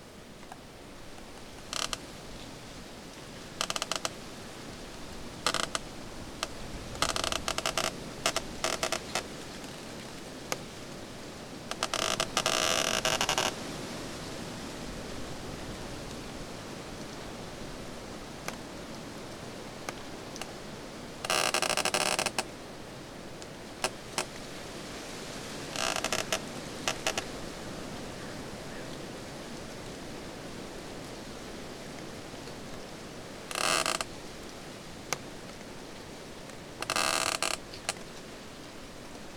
Lithuania, Gaiziunai, study of a tree
Tree in a wind. The recording is in two parts: the first his made with usual microphones, the second - with contact mics. It shows what processes and tensions happen in the tree
September 2011